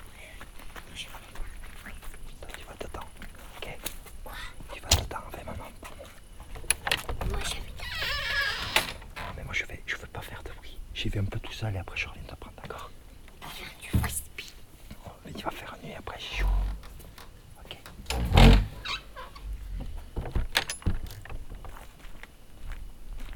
Boulaur - Ulysse and the storm
avec mon fils enregistrant un orage dans la campagne
Zoom h4 / micro oreillettes soundman
Boulaur, France